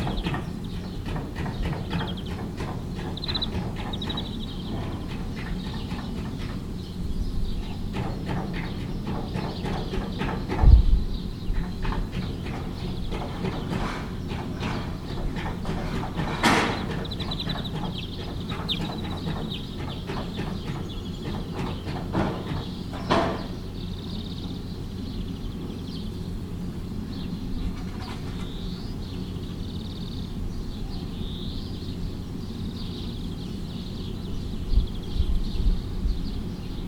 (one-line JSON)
{"title": "Halenfeld, Buchet, Deutschland - Dachdecker / Roofer", "date": "2014-07-15 15:00:00", "description": "Dachdecker decken eine Garage mit Blechprofilen, Vögel zwitschern.\nRoofers cover a garage with heet metal profiles, birds chirping.", "latitude": "50.26", "longitude": "6.32", "altitude": "500", "timezone": "Europe/Berlin"}